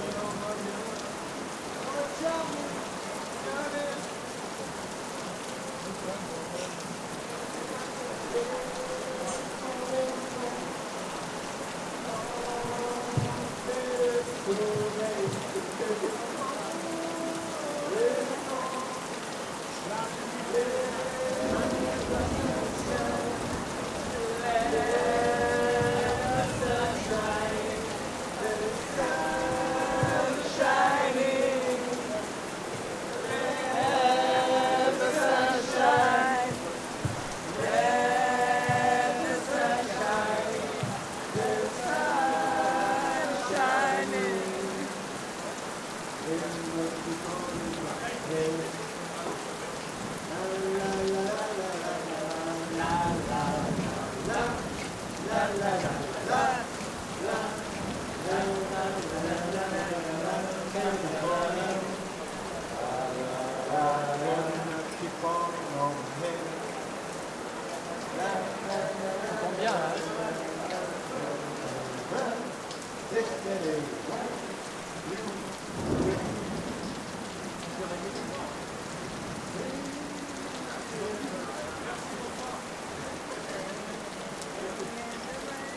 Le bistro, Rainy Night
A rainy night at le Bistro, 19/09/2009